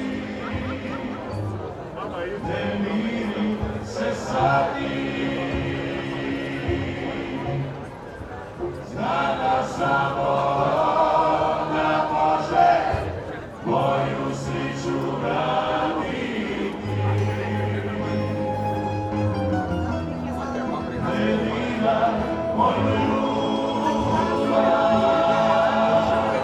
sea room, Novigrad, Croatia - window, musicians, singing crowd ...